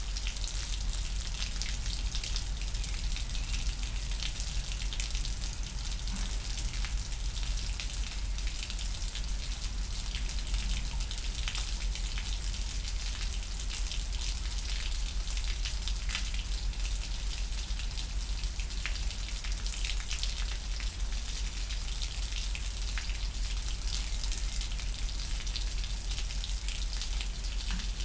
Rue de la Fontaine, Esch-sur-Alzette, Luxemburg - river Alzette, inflow, dripping water
The river Alzette was covered in the 1910s in order to create a new city center on top of it. 100m west the river comes out from the underground, flowing in a concrete canal. Water inflow from a nearby pond.
(Sony PCM D50, Primo EM272)